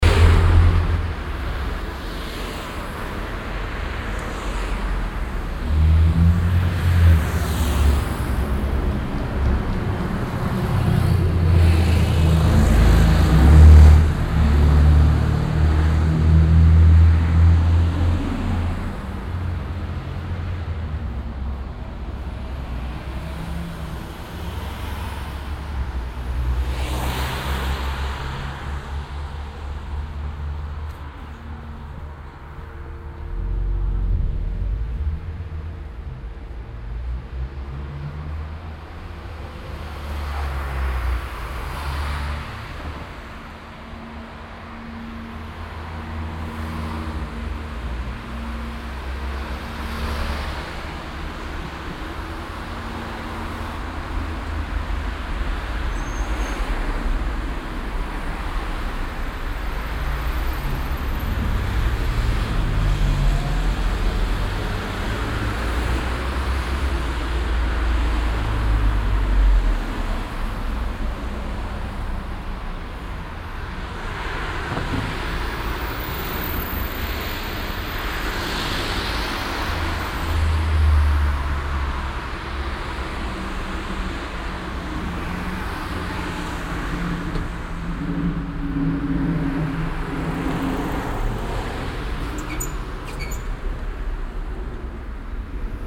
haan, bahnhofstrasse, verkehr, nachmittags
nachmittäglicher strassenverkehr auf der bahnhofstrasse
project: social ambiences/ listen to the people - in & outdoor nearfield recordings